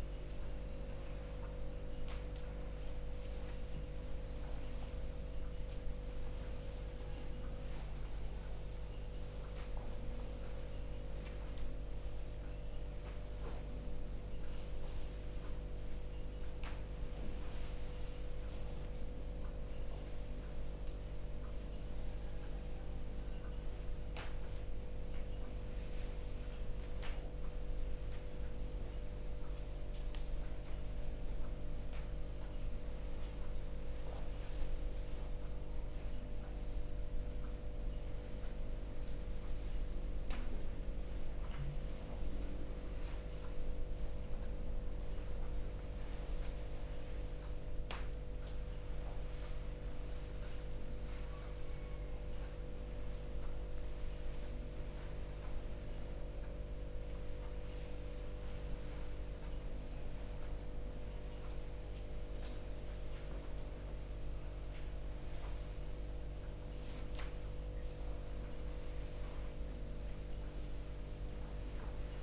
Morning walking meditation (Kinh Hanh) for a group of practitioners at New Barn Field Centre in Dorset. This upload captures the movement from sitting meditation to walking meditation and back. The sounds of the bells, practitioners and rustling of clothing are underpinned by the buzz of four electric heaters overhead, the ticking of a clock behind and sounds of planes and birds outside. (Sennheiser 8020s either side of a Jecklin Disk on a SD MixPre6)
1 October 2017, 07:25